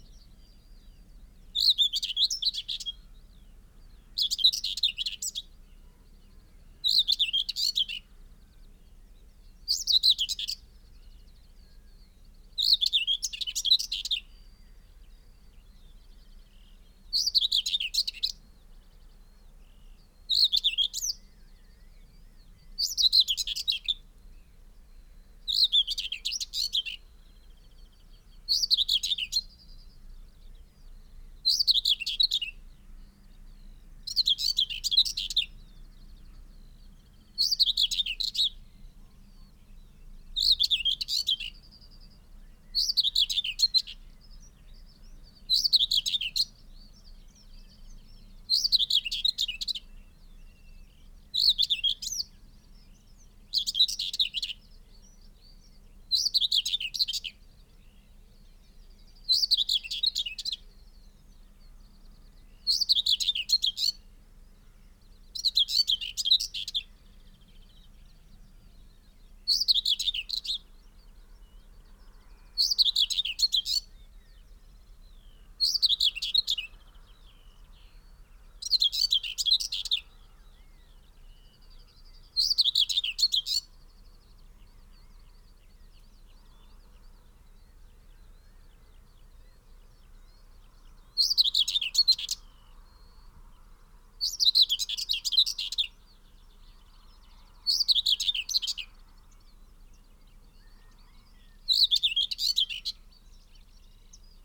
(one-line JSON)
{"title": "Malton, UK - whitethroat song soundscape ...", "date": "2022-05-30 05:00:00", "description": "whitethroat song soundscape ... dpa 4060s clipped to bag to zoom h5 ... bird calls ... song ... from chaffinch ... wood pigeon ... linnet ... wren ... chaffinch ... crow ... blackbird ... song thrush ... skylark ... pheasant ... yellowhammer ... extended time edited unattended recording ... bird often moves away visiting other song posts ... occasionally its song flight can be heard ...", "latitude": "54.12", "longitude": "-0.54", "altitude": "79", "timezone": "Europe/London"}